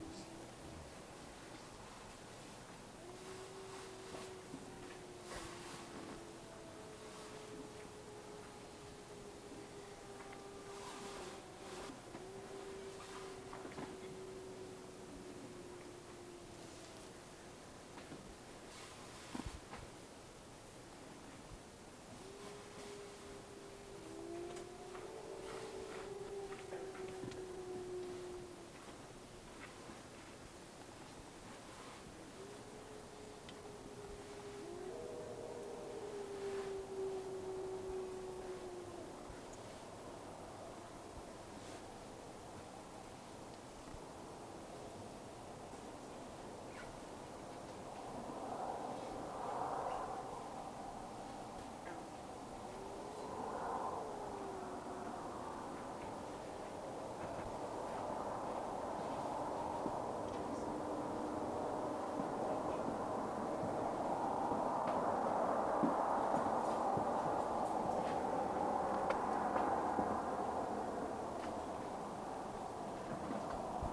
Lake Superior Provincial Park, ON, Canada - Wolves Howling at Rabbit Blanket
Wolves howling in the distance in Lake Superior Provincial Park, around 4 in the morning. A single truck drives by on the Trans-Canada near the end of the recording. Recorded from inside a tent with a Zoom H4N